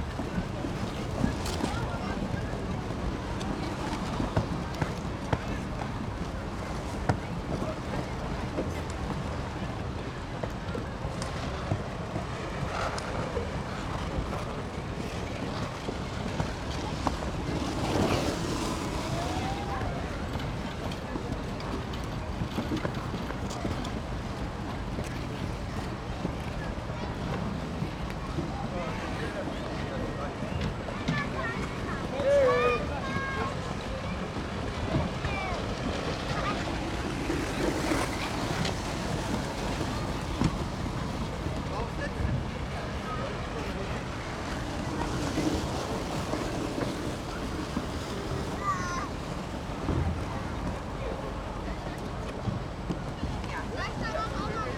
Mediapark, Köln - temporary ice skating
temporary ice skating area at Mediapark Köln, as part of a fake winter market. no snow, 10°C
(PCM D50, Primo EM172)